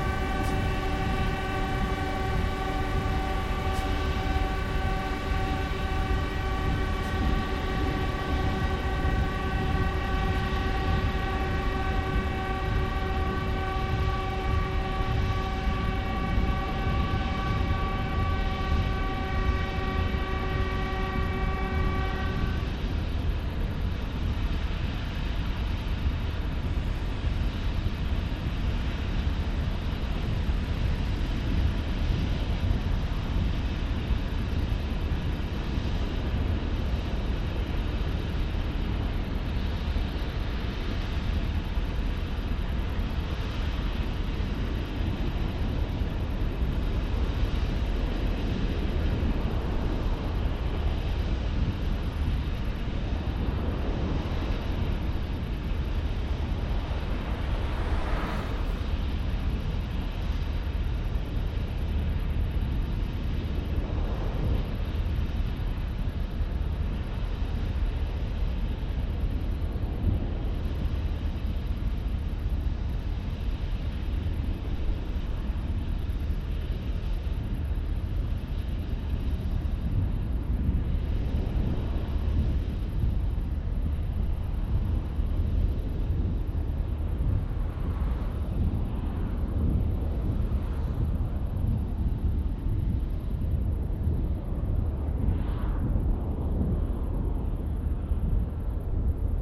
soundscape made with sounds of the port of antwerp
could be used to relax while listening
recordings where made between 1980 & 2015